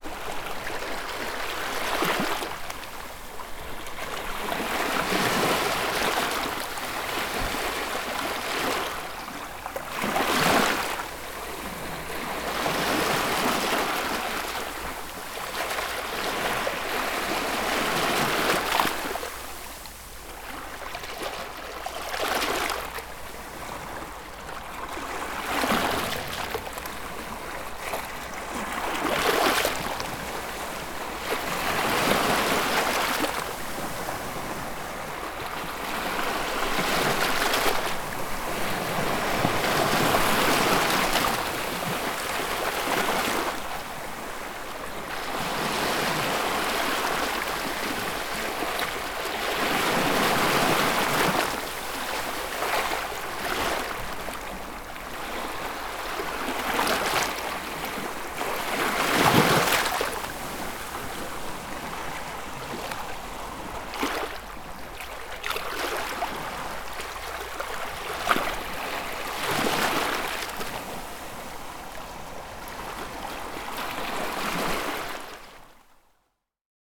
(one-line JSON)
{"title": "Peníscola, Castelló, Spain - LIQUID WAVE Calm Sea, Laps, Water on Rocks, Fizzy, 0.2m", "date": "2018-08-05 17:30:00", "description": "Peníscola, SPAIN\nNatural Park of Serra d'Irta - Coves\nREC: Sony PCM-D100 ORTF", "latitude": "40.27", "longitude": "0.32", "timezone": "GMT+1"}